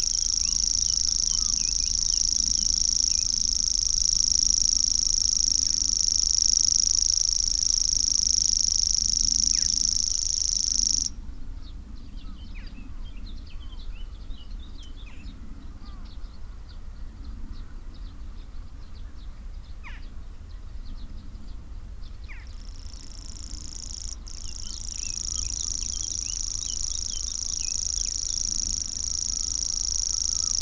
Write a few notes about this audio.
Grasshopper warbler ... in gannet territory ... mics in a SASS ... bird song ... calls from ... pheasant ... wood pigeon ... herring gull ... blackcap ... jackdaw ... whitethroat ... gannet ... tree sparrow ... carrion crow ... reed bunting ... some background noise ...